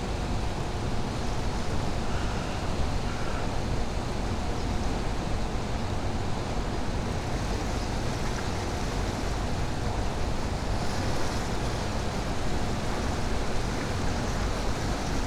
{
  "title": "Uiam hydroelectric dam X Egrets, Grey Herons, Cormorants",
  "date": "2019-09-13 15:00:00",
  "description": "Egrets, Grey Herons and Cormorants gather at safe perches at the foot of Uiam hydroelectric dam...overlapping sounds of 1. the electricity distribution lines 2. water flow through the dam 3. bird calls and even the sound of their wing beats slapping the surface of the river as they take flight...",
  "latitude": "37.84",
  "longitude": "127.68",
  "altitude": "86",
  "timezone": "Asia/Seoul"
}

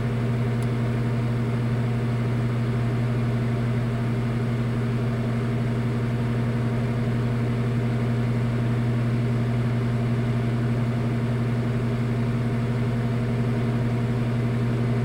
{
  "title": "401 S Lucas Street - Microwave canned spaghetti and meatballs",
  "date": "2022-01-24 07:15:00",
  "latitude": "41.66",
  "longitude": "-91.52",
  "altitude": "212",
  "timezone": "America/Chicago"
}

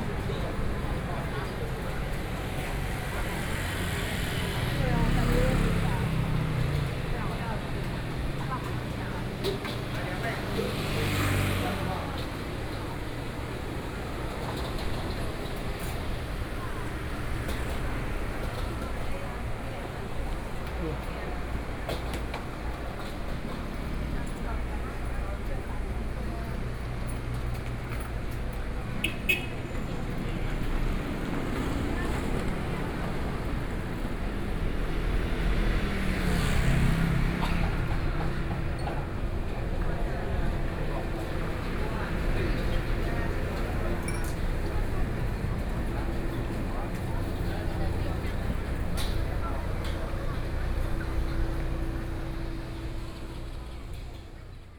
{
  "title": "Liaoning St., Zhongshan Dist. - in the streets",
  "date": "2014-05-02 12:06:00",
  "description": "Traffic Sound, Walking in the streets, Various shops sound",
  "latitude": "25.05",
  "longitude": "121.54",
  "altitude": "9",
  "timezone": "Asia/Taipei"
}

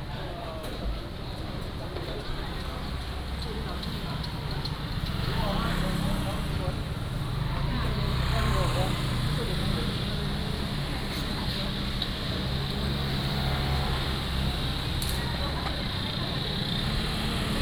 {
  "title": "北辰公有市場, Magong City - Walking through the market",
  "date": "2014-10-22 06:37:00",
  "description": "Walking through the market, Traffic Sound, Birds singing",
  "latitude": "23.57",
  "longitude": "119.57",
  "altitude": "20",
  "timezone": "Asia/Taipei"
}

